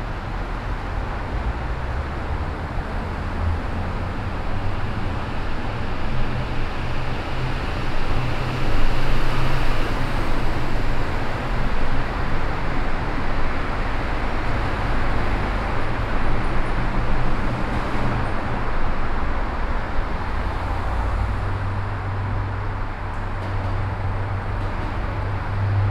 Washington DC, K ST NW - Tunnel
USA, Virginia, Washington DC, Cars, Truck, Tunnel, Road traffic, Binaural